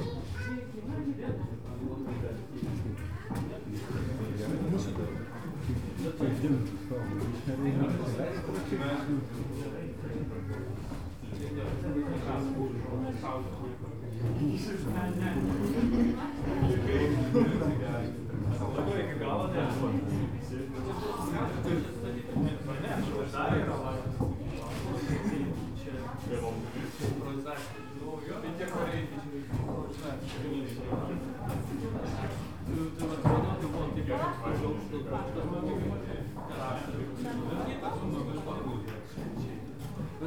Raudondvaris, Lithuania, museum in castle tower
a noisy crowd in the museum of Raudondvaris castle tower
4 October, ~11:00